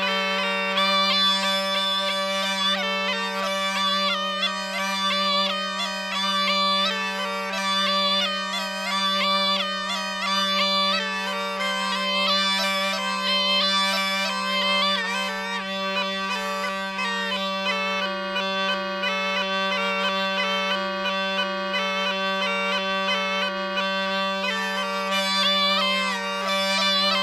Bhandari Swiss Cottage, Tapovan, By-Pass Road, Tapovan, Rishikesh, Uttarakhand, Inde - Rishikesh - Swiss Cottage Les charmeurs de serpents - Snakes charmers
Rishikesh - Swiss Cottage
Les charmeurs de serpents - Snakes charmers